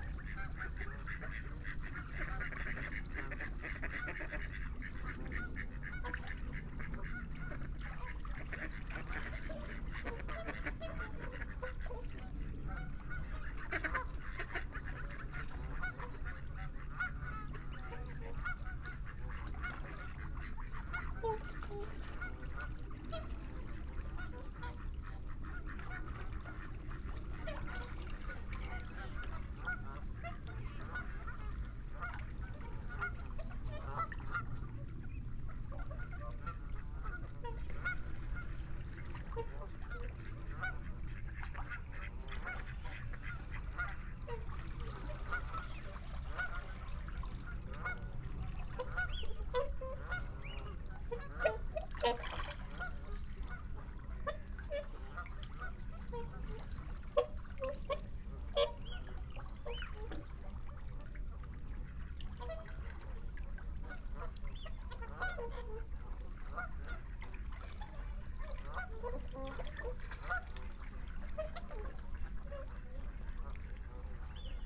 {"title": "Dumfries, UK - whooper swan soundscape ... sass ...", "date": "2022-01-31 16:40:00", "description": "whooper swan soundscape ... scottish water hide ... xlr sass on tripod to zoom h5 ... bird calls from ... teal ... moorhen ... mallard ... barnacle geese ... shoveler ... mallard ... jackdaw ... time edited unattended extended recording ... at 50:00 mins approx ... flock of barnacle geese over fly the hide ... time edited unattended extended recording ...", "latitude": "54.98", "longitude": "-3.48", "altitude": "8", "timezone": "Europe/London"}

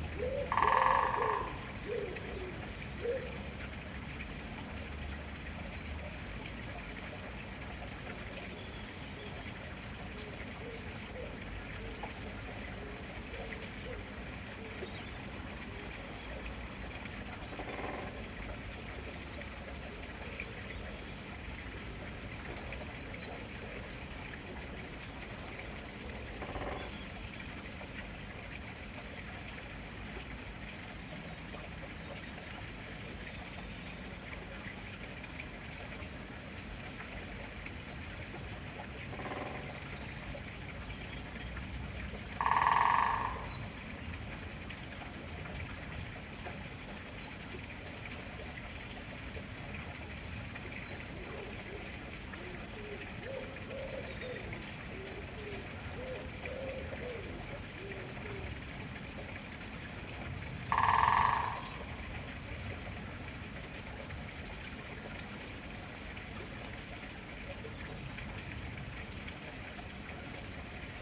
{"title": "London Borough of Hackney, Greater London, UK - Woodpecker drumming in the neighbour's back garden", "date": "2015-02-15 07:42:00", "description": "This was quite a surprise. I've occasionally seen Greater Spotted Woodpeckers in the gardens here but they've never stayed long. This is the first time I've heard one actually drumming. It's found a particularly resonant spot in the tall sycamore visible from the bedroom window and has been busy two mornings in a row. Is it really staking out a territory in the neighbourhood? Definitely welcome. The running water sound is a neighbour's water feature, which is constantly present. I wish it would get switched off every now and again.", "latitude": "51.56", "longitude": "-0.07", "altitude": "24", "timezone": "Europe/London"}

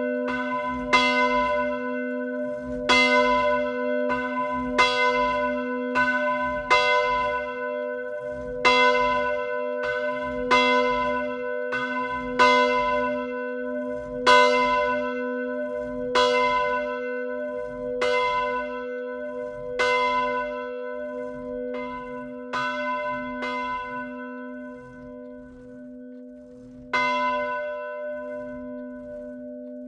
{"title": "Le Bourg, Tourouvre au Perche, France - Bivilliers - Église St-Pierre", "date": "2019-11-20 10:45:00", "description": "Bivilliers (Orne)\nÉglise St-Pierre\nLa volée manuelle.", "latitude": "48.58", "longitude": "0.62", "altitude": "220", "timezone": "Europe/Paris"}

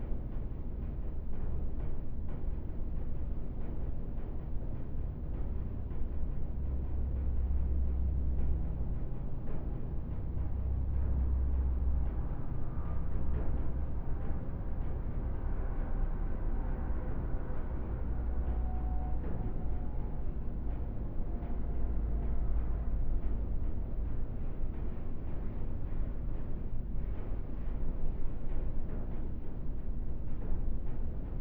{"title": "Altstadt, Düsseldorf, Deutschland - Düsseldorf, Salm Bestattungen, pre room", "date": "2013-01-24 11:15:00", "description": "At the underearth pre room hall to the private chael and some seperated farewell rooms.\nThe sound of the carpeted silent ambience with the crackling accents of some electric lights and wooden doors. In the background some voices from the entrance.\nThis recording is part of the intermedia sound art exhibition project - sonic states\nsoundmap nrw - topographic field recordings, social ambiences and art places", "latitude": "51.23", "longitude": "6.77", "altitude": "43", "timezone": "Europe/Berlin"}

